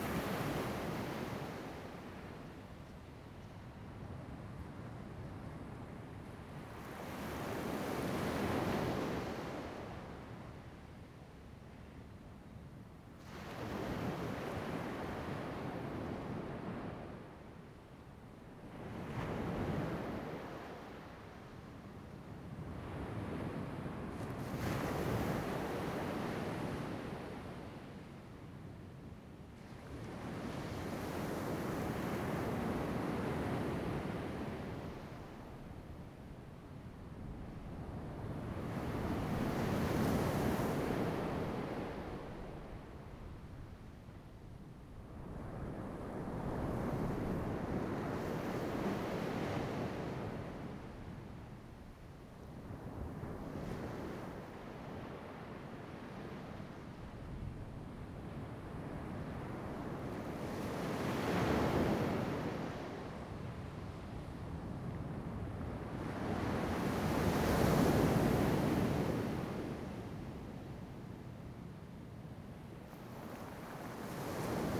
椰油村, Koto island - sound of the waves
In the beach, Sound of the waves
Zoom H2n MS +XY
Taitung County, Taiwan, 28 October 2014